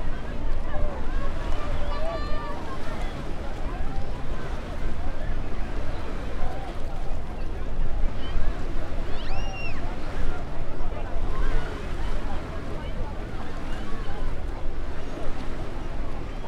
Mamaia Beach Promontory, Romania - Rocky Promontory on the Beach, Daytime
On a small headland made of tetrapods and other rocks fisherman gather alongside with tourists who want to take a break from the busy beach. The latter is still present in the soundscape with human noises, music rumble and boat-engine noises. Turning the microphone away from it and towards the rocks brings a different type of ambience, as the "generic" sea sound of waves crashing on the shore is less present and a calmer watery sound (almost akin to a lake) is present. Recorded on a Zoom F8 using a Superlux S502 ORTF Stereo Microphone.
România, 24 June 2019, ~11:00